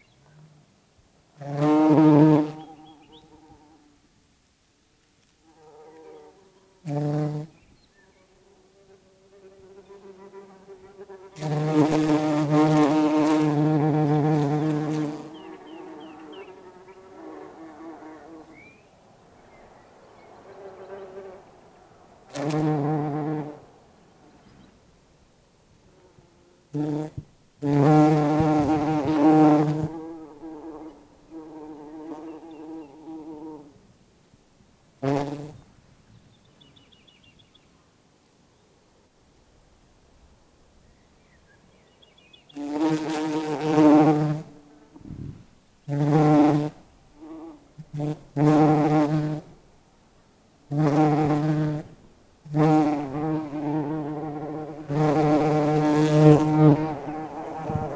Bédouès, France - Bumblebees
Bumblebees are on the trot ! They work hard in hawthorn.